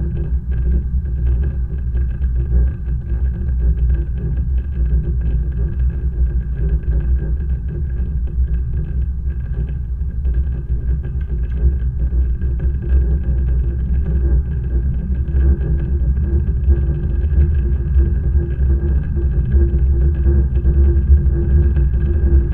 Užpaliai, Lithuania, cell tower
cell tower support fence. magnetic contact microphones
Utenos apskritis, Lietuva, 21 September 2022